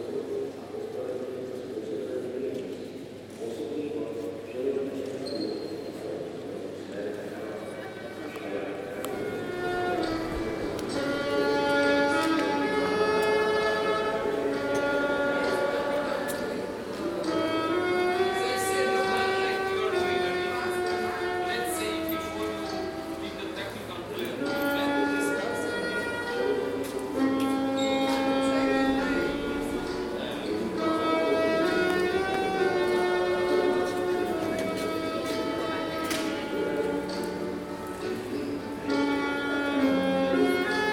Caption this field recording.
Další nádražní ambient po dvou letech.